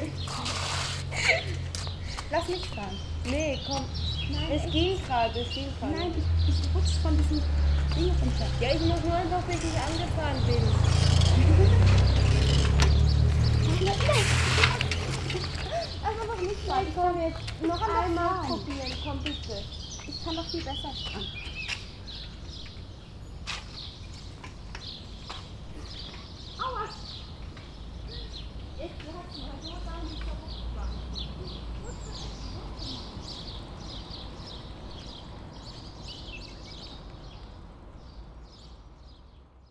Berlin: Vermessungspunkt Friedelstraße / Maybachufer - Klangvermessung Kreuzkölln ::: 04.07.2010 ::: 06:44